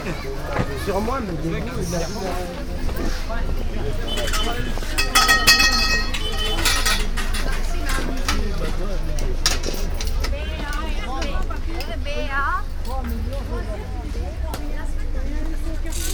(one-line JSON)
{"title": "paris, noisiel, regular outdoor market", "description": "walk thru a big regular outdoor market with all kinds of goods, sold by mostly african french people\ninternational cityscapes - social ambiences and topographic field recordings", "latitude": "48.84", "longitude": "2.62", "altitude": "96", "timezone": "Europe/Berlin"}